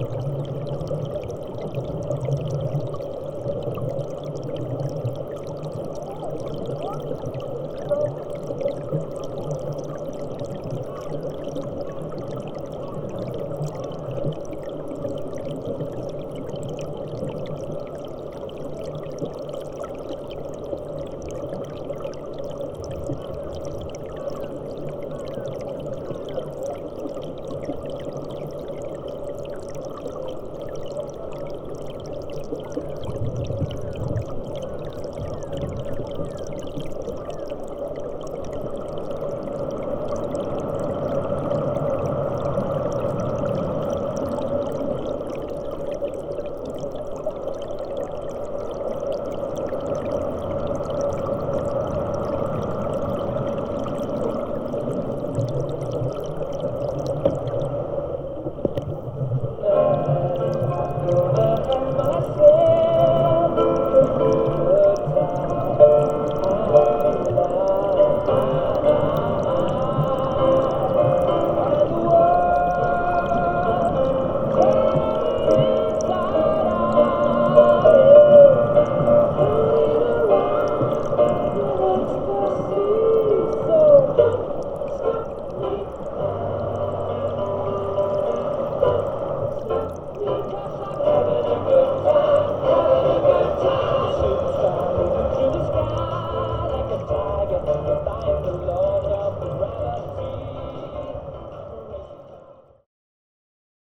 Druskininkai, Lithuania, musical fountain under
Musical fountain of Druskininkai recorded from underwater (just a few centimetres) perspective
Alytaus apskritis, Lietuva, 8 September